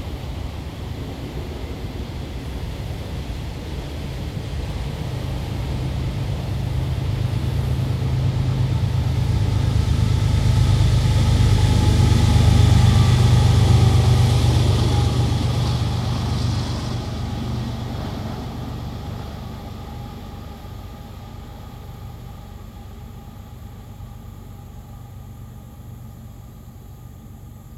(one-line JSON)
{"title": "Stinging Nettle Trail, Ballwin, Missouri, USA - Stinging Nettle Freight Train", "date": "2020-09-27 10:21:00", "description": "Stinging Nettle Trail. Union Pacific freight train passing in the woods.", "latitude": "38.55", "longitude": "-90.56", "altitude": "137", "timezone": "America/Chicago"}